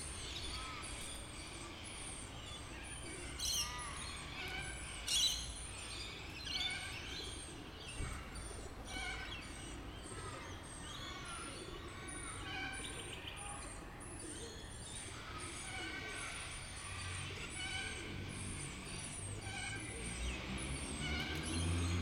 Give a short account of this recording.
Crows and pigeons feeding and flocking around the Waterloo Housing Estate, recorded with a Zoom pro mic.